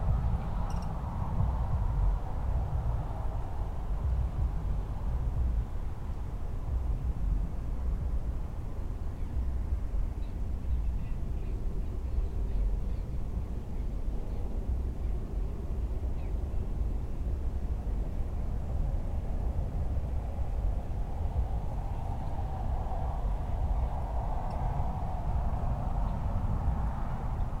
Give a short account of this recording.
A recording made to the side of a boardwalk trail that passes over a marsh. Cars can be heard passing over the bridge to Fripp Island (Tarpon Blvd.) to the left, and multiple people pass by the recording location on the right. The ambience is quiet, with most sounds being quite distant. [Tascam DR-100mkiii & Primo EM-272 omni mics]